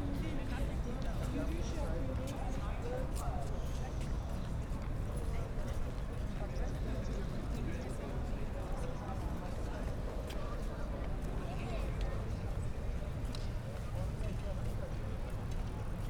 Schiffbauerdamm, Berlin, Deutschland - between Government buildings, Sunday evening ambience
Berlin, Schiffbauerdamm, have been curious about the acoustic situation in between the government buildings, near the river. Sunday evening ambience, few days after the relaxation of the Corona lockdown rules.
(SD702, DPA4060)